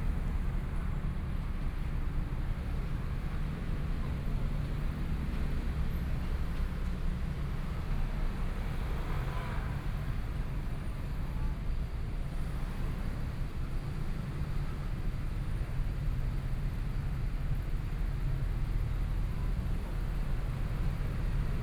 Night walk in the alley, Went to the main road from the alley, Traffic Sound
Binaural recordings
Zoom H4n+ Soundman OKM II
Taipei City, Taiwan, 17 February 2014